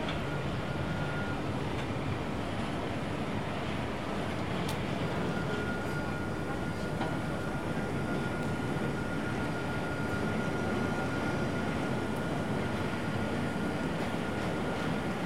train, station, message pass sanitaire info covid 19 sncf
passenger
captation Zoom H4n
France métropolitaine, France, 28 November 2021